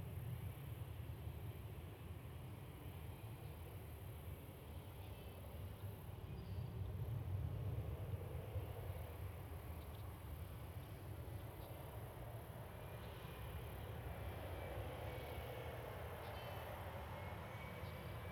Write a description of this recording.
Recorded directly under the final approach for Runway 12R at Minneapolis/St Paul International Airport. Aircraft are no more than a couple hundred feet off the ground at this point. Planes departing on 17 can also be heard. Theres some noisy birds that can be heard as well.